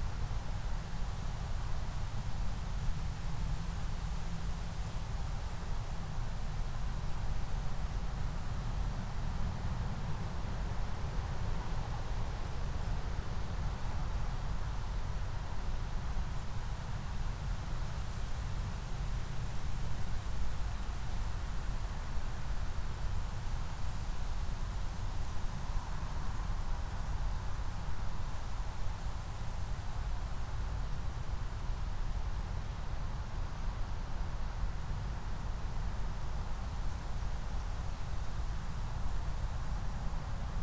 Moorlinse, Berlin Buch - near the pond, ambience
10:19 Moorlinse, Berlin Buch
Deutschland, 24 December